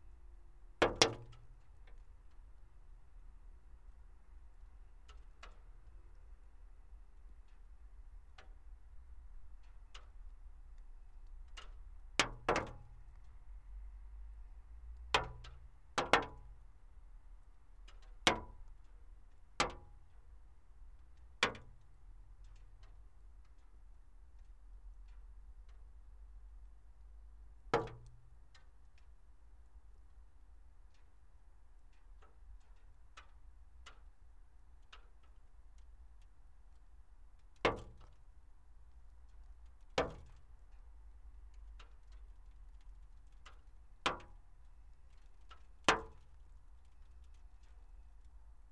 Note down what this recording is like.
Melting water drops on windowsill